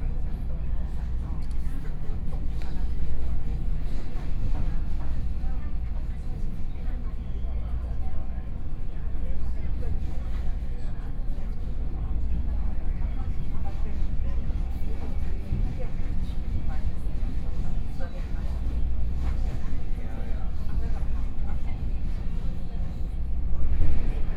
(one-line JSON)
{"title": "Tanzi, Taichung - Local Train", "date": "2013-10-08 10:48:00", "description": "Taichung Line, from Fengyuan Station to Taiyuan Station, Zoom H4n + Soundman OKM II", "latitude": "24.22", "longitude": "120.71", "altitude": "183", "timezone": "Asia/Taipei"}